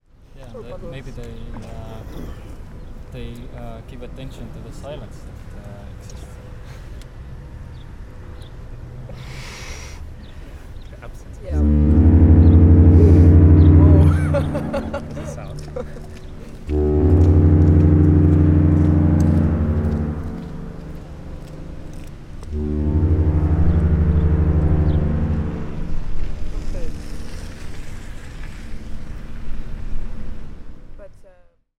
Ship horns of Tallinn harbor
short clip of ship horns
Tallinn, Estonia, 6 July 2011